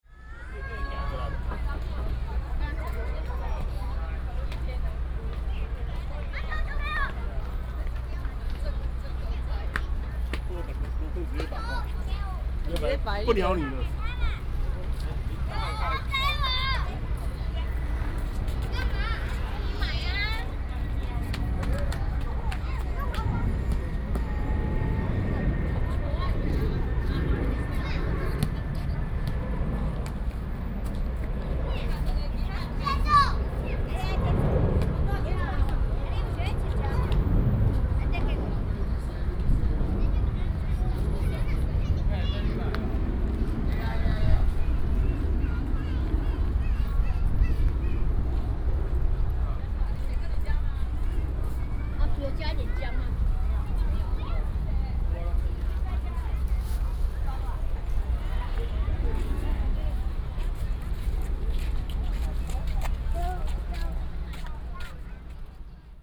大園海濱休憩區, Dayuan Dist., Taoyuan City - Sightseeing fishing port
Sightseeing fishing port, Visitors, Child, Aircraft sound